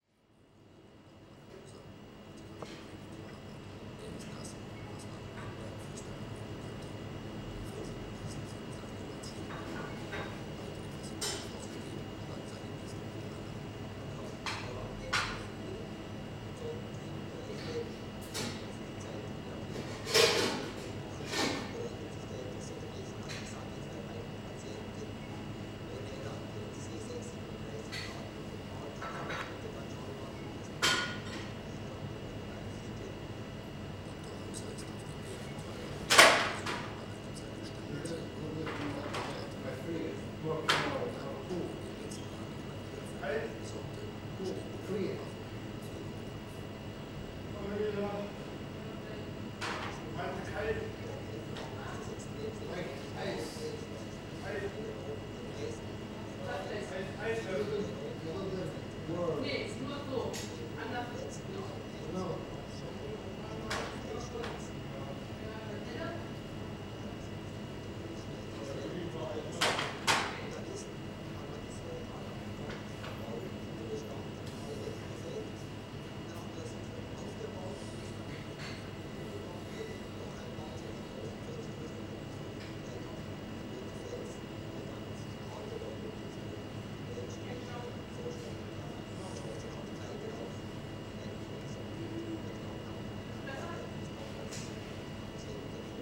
Raststätte Hildesheimer Börde an der Autobahn A7. Fieldrecording. Mitte April 2016. Abends, etwa um 19:00h. Wolkenloser Himmel, fließender Verkehr, wenig Besucheraufkommen. Position im Eingangsbereich. Gerätschaften der Systemgastronomie. Reinigungsarbeiten.
Germany, 19 April 2016, 19:30